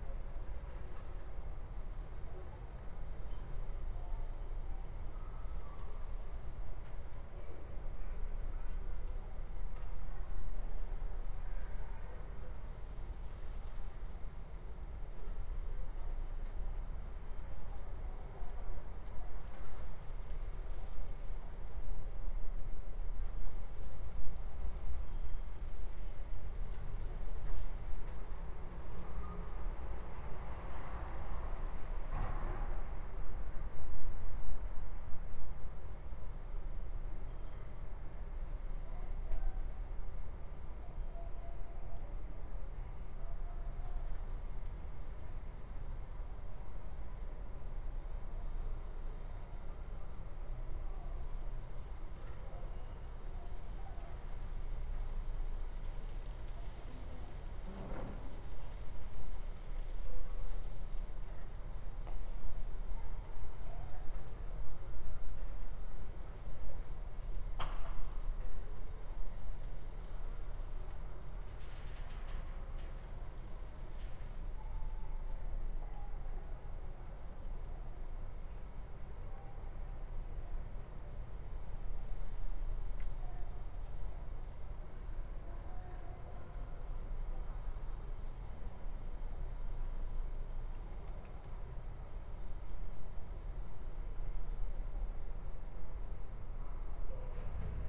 Ascolto il tuo cuore, città, I listen to your heart, city. Several chapters **SCROLL DOWN FOR ALL RECORDINGS** - Round Noon bells on Sunday from terrace in the time of COVID19, Soundscape
"Round Noon bells on Sunday from terrace in the time of COVID19" Soundscape
Chapter XXXIV of Ascolto il tuo cuore, città, I listen to your heart, city.
Sunday April 5th 2020. Fixed position on an internal terrace at San Salvario district Turin, twenty six days after emergency disposition due to the epidemic of COVID19.
Start at 11:52 a.m. end at 00:22 p.m. duration of recording 29’23”.